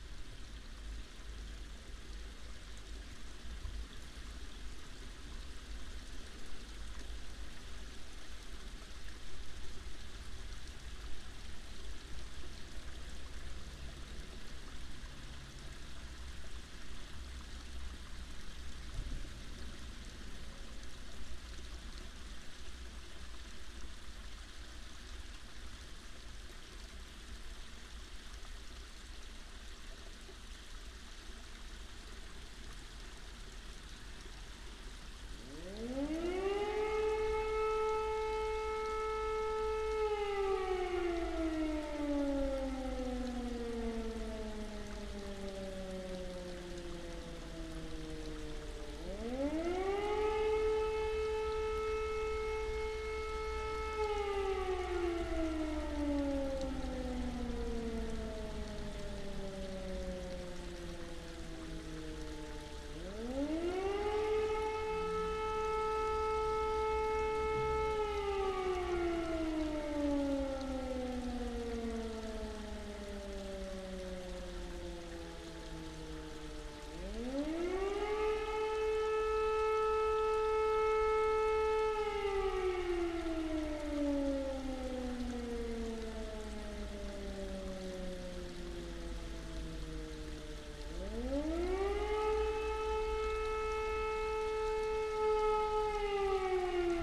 Utena, Lithuania, civil safety sirens
checking alarm system of civil safety. omni mics and fm radio